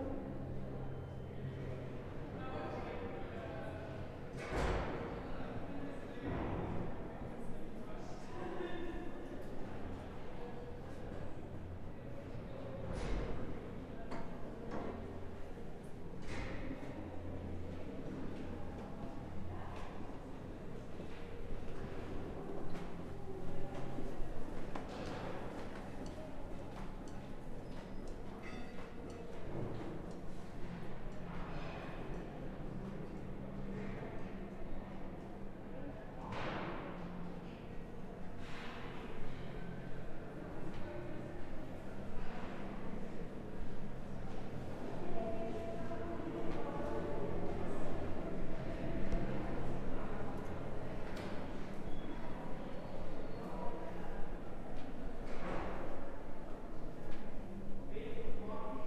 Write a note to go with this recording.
During the day the intercom made an anouncement that was rather unusual.